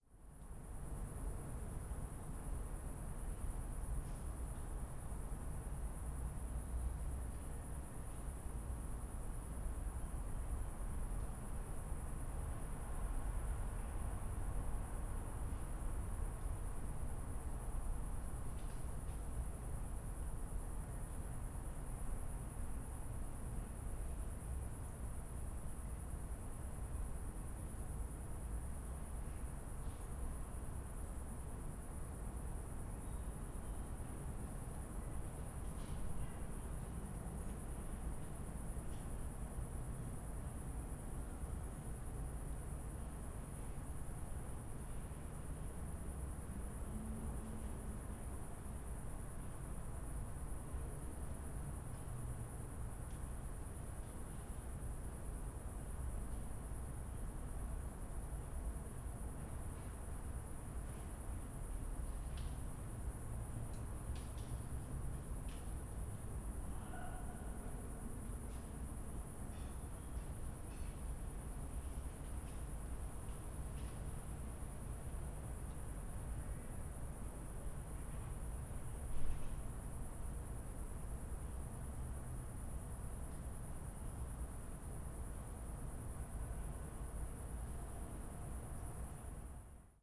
a late summer cricket in the backyard at midnight
Berlin, Germany, 4 September 2011